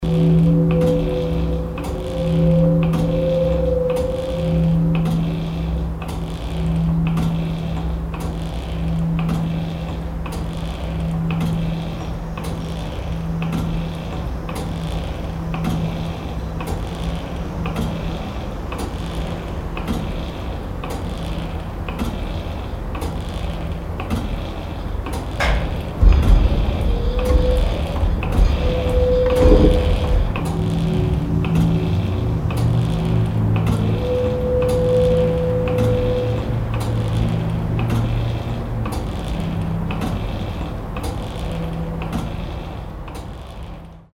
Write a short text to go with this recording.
glockenmechanik aufgenommen im glockenturm, mittags, soundmap nrw: social ambiences/ listen to the people - in & outdoor nearfield recording